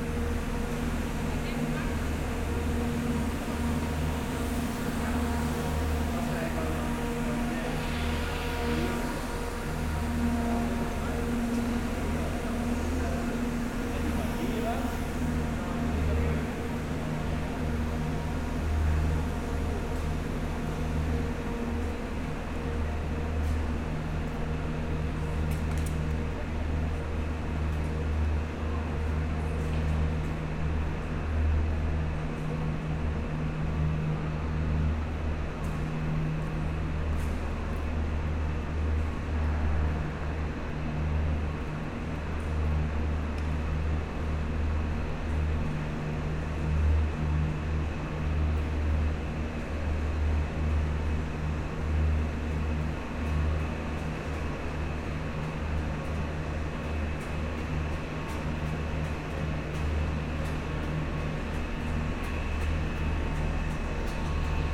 Tours, France - Tours station
The very noisy Tours station atmosphere. The diesel engines flood the huge station with a heavy drone sound. After a walk in the station, I buy a ticket in the office, and I go out near the fountain.
14 August